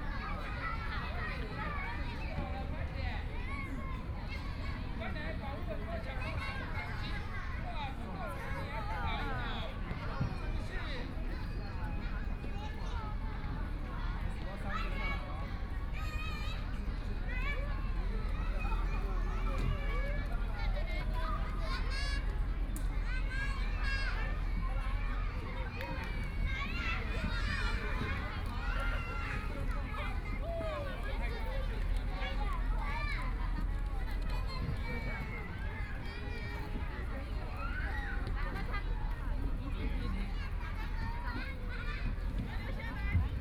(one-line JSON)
{"title": "碧湖公園, Taipei City - Kids play area", "date": "2014-03-15 16:49:00", "description": "Sitting in the park, Kids play area\nBinaural recordings", "latitude": "25.08", "longitude": "121.59", "timezone": "Asia/Taipei"}